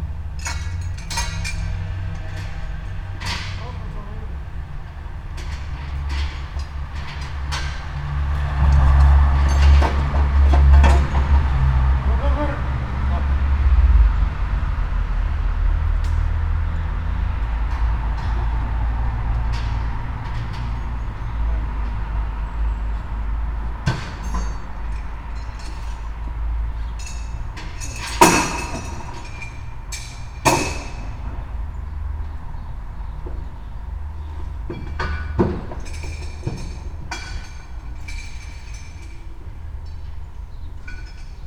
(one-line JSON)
{"title": "all the mornings of the ... - jul 27 2013 saturday 07:42", "date": "2013-07-27 07:42:00", "latitude": "46.56", "longitude": "15.65", "altitude": "285", "timezone": "Europe/Ljubljana"}